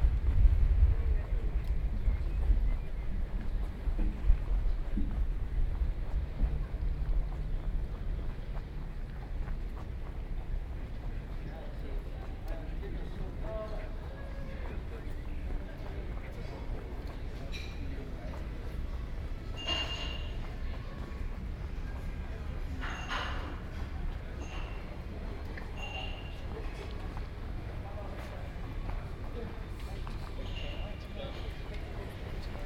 Total time about 36 min: recording divided in 4 sections: A, B, C, D. Here is the third: C.